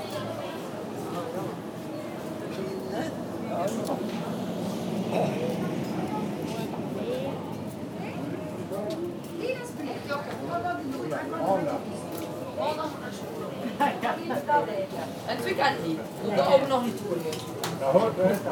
De Panne, Belgique - Local market
On a sunny saturday morning, the local market of De Panne. The sellers speak three languages : dutch, french and a local dialect called west-vlaams.